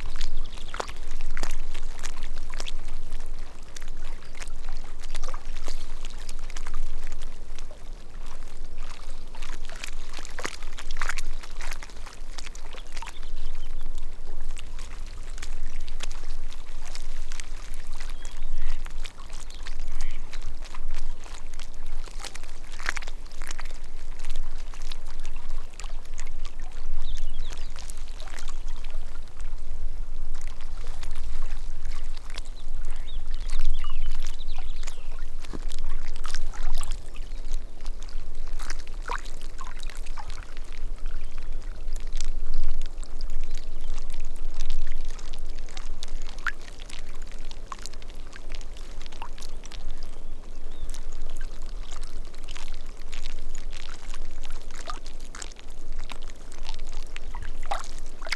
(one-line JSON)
{"title": "Stor-Tavelsjön, near Långviksvallen", "date": "2011-04-29 12:40:00", "description": "Water lapping against rapidly thawing ice on lake.", "latitude": "63.99", "longitude": "20.01", "altitude": "260", "timezone": "Europe/Stockholm"}